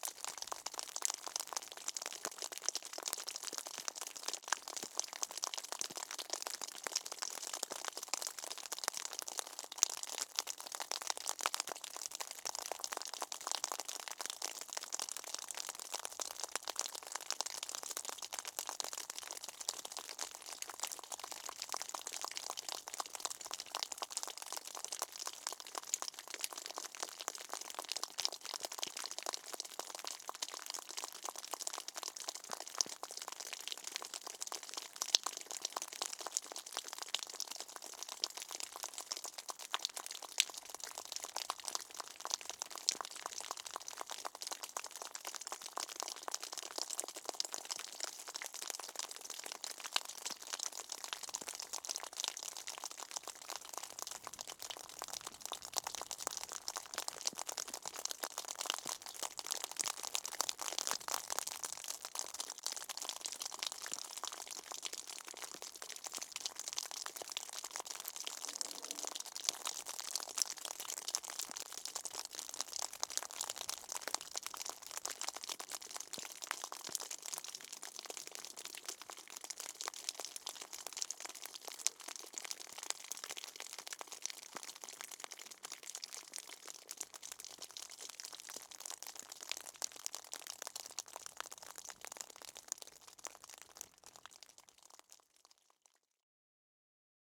Kuktiškės, Lithuania, water dripping
sunny day, snow is melting on the roof, water dripping on the ground